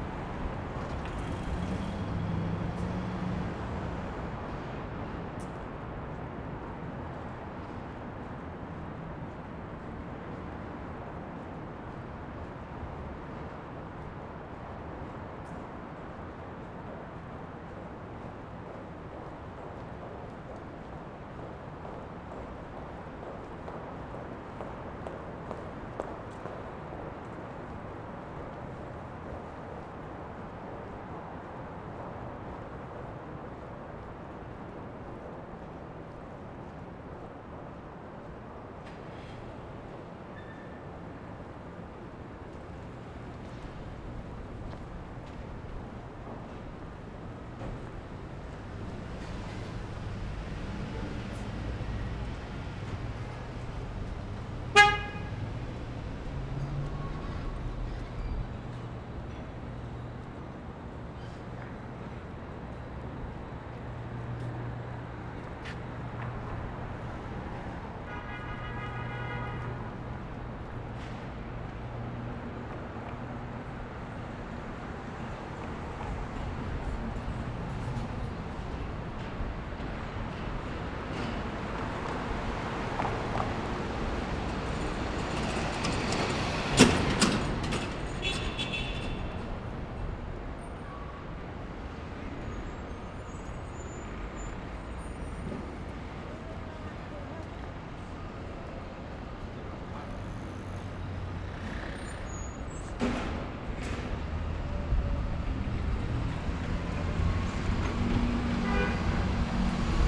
Lexington Ave, New York, NY, USA - Lex Ave Walk
A short walk around Lexington Ave., starting at the Chrysler Building and moving up towards E47st street and then Park Ave.
General sounds of traffic, pedestrians, constructions, and footsteps.
11 February 2022, ~11:00